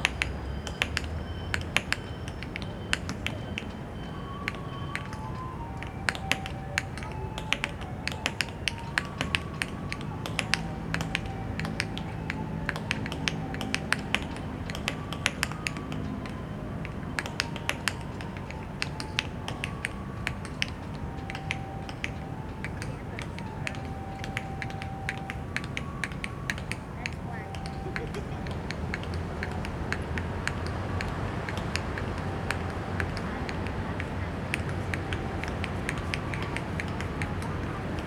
四號公園, Zhonghe Dist., New Taipei City - Exercise tap
Exercise tap, in the Park, Sony ECM-MS907, Sony Hi-MD MZ-RH1
New Taipei City, Taiwan, 16 February 2012